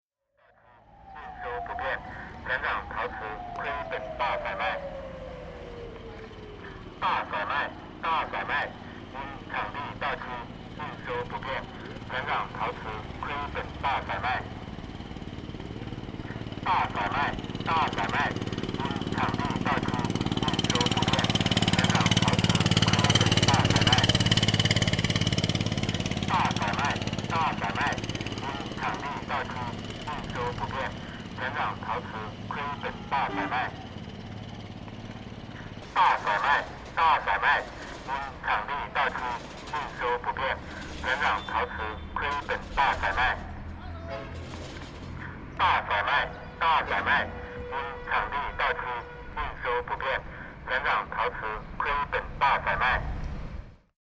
Selling china in Shangri-La, China - Selling china
Consumer advertising on a porcelain sales booth in Shangri-La, 香格里拉县, Xiānggélǐlā xiàn, 27° 50′ N, 99° 36′ O